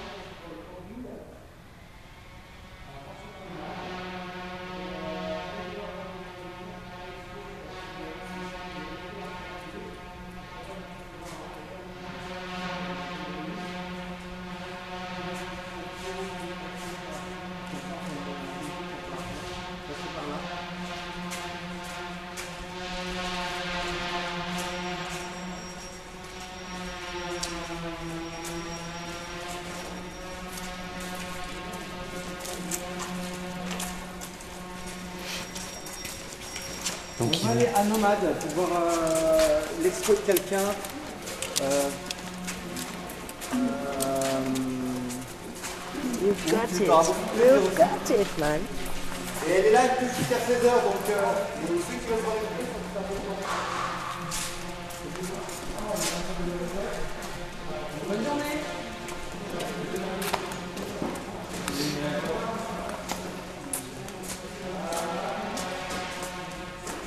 Lyon, La Friche R.V.I., guided visit, electric saw
Visit of la Friche RVI, a disabled factory where more than 300 artists live and work. By the end of july 2010 this place will be closed by local authorities.
Lyon, France, 7 July 2010